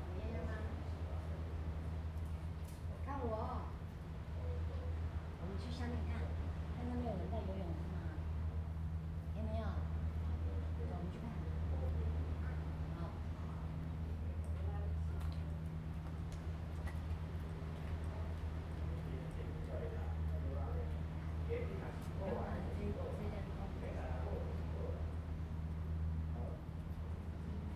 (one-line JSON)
{"title": "靈霄寶殿, Hsiao Liouciou Island - In the temple", "date": "2014-11-01 10:24:00", "description": "In the temple, Vessels siren in the distance\nZoom H2n MS +XY", "latitude": "22.35", "longitude": "120.38", "altitude": "13", "timezone": "Asia/Taipei"}